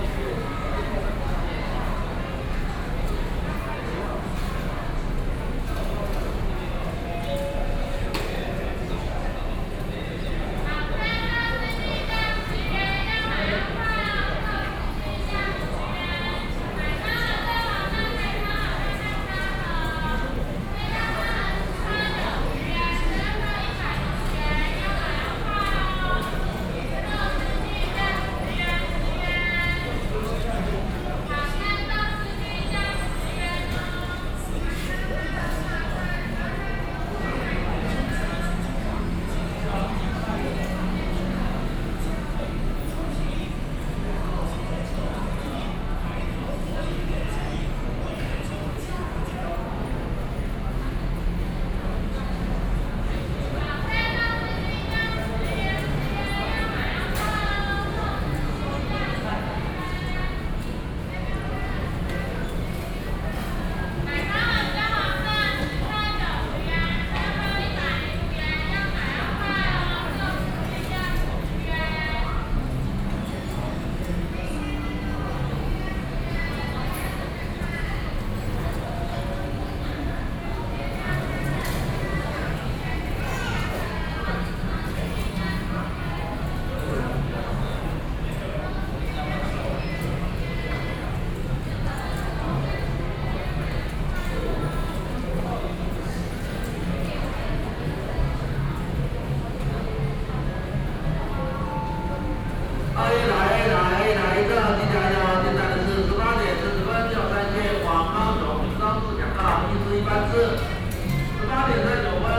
in the Station hall, Station broadcast messages, Sony PCM D50 + Soundman OKM II